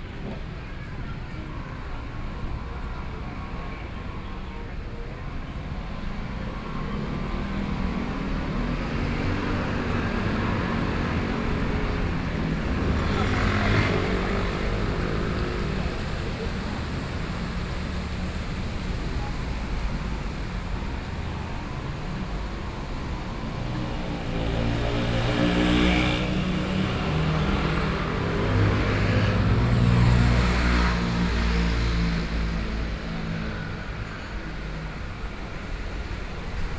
Beitou, Taipei - Forthcoming when it rains
Forthcoming when it rains, Because the people in the park began to leave and escape the rain, Binaural recordings, Sony PCM D50 + Soundman OKM II